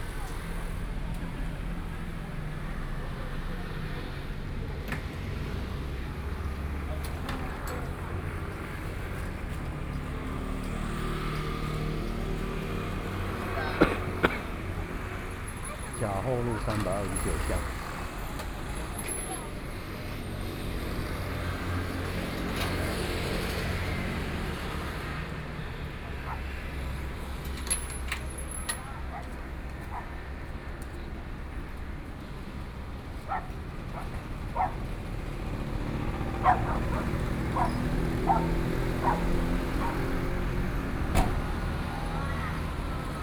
Jiahou Rd., Houli Dist. - On the road
walking on the road, Traffic Sound, Then enter the restaurant
Binaural recordings
Taichung City, Taiwan